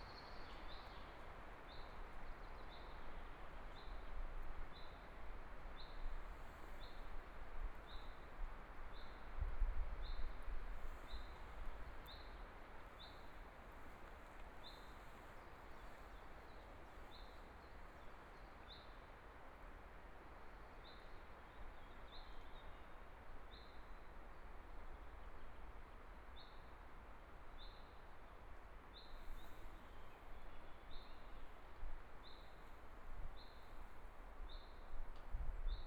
Hohenkammer, Munich 德國 - In the woods
In the woods